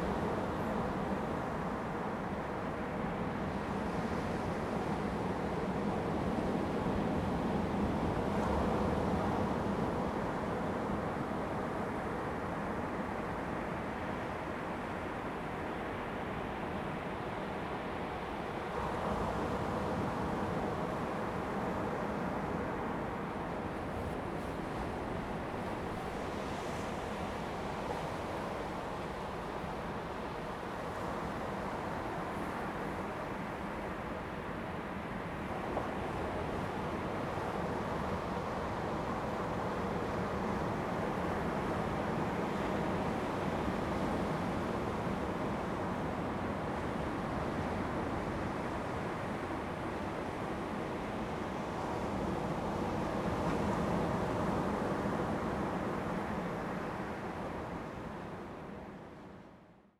正義休閒漁業區, Jinhu Township - At the beach
Sound of the waves, At the beach
Zoom H2n MS+XY
金門縣 (Kinmen), 福建省, Mainland - Taiwan Border, 3 November 2014, ~17:00